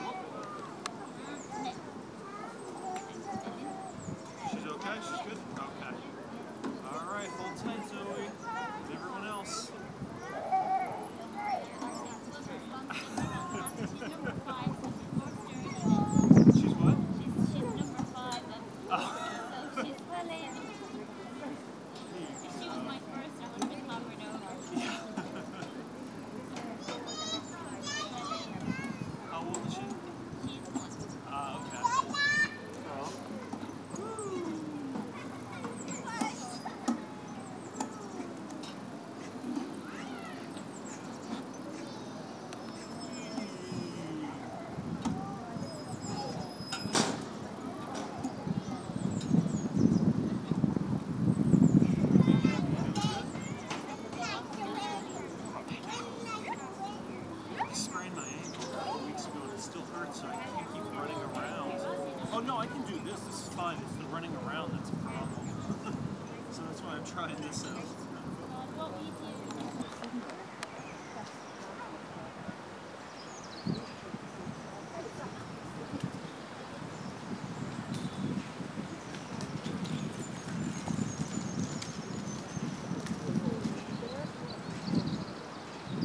Griffith Park, Dublin, Co. Dublin, Ireland - Playground beside Tolka River
Bealtaine workshops with older people exploring the soundscape and landscape of the River Tolka as it flows through Griffith Park in Drumcondra, Dublin. Recordings were made through a series of walks along the river. The group reflected on these sounds through drawing and painting workshops in Drumcondra library beside the park
13 May 2015, ~11:00